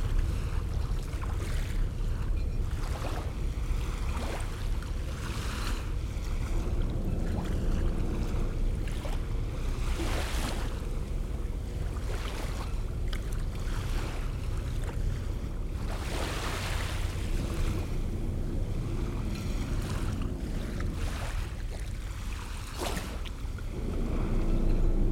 Neutral Bay, NSW - Neutral Bay Wharf Beach
Recorded using a pair of DPA 4060s and Earthling Designs custom preamps into an H6 Handy Recorder